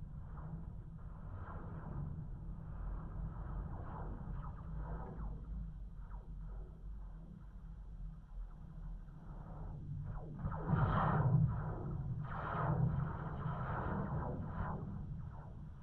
Kulionys, Lithuania, abandoned funicular
contact microphone on an abandoned funicular used for winter sports
7 August 2017, 17:10